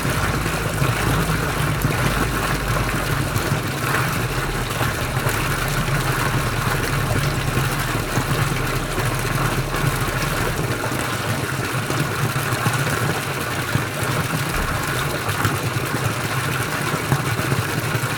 Fromelennes, Place des Rentiers, the fountain
Fromelennes, France, 30 July 2011